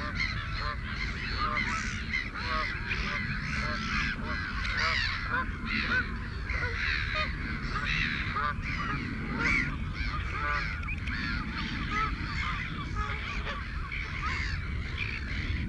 Stone Cottages, Woodbridge, UK - Belpers Lagoon soundscape ...
Belper's Lagoon soundscape ... RSPB Havergate Island ... fixed parabolic to cassette recorder ... bird calls ... song from ... canada goose ... shelduck ... chiffchaff ... avocet ... lapwing ... oystercatcher ... redshank ... ringed plover ... black-headed gull ... herring gull ... back ground noise from planes ... distant ships ...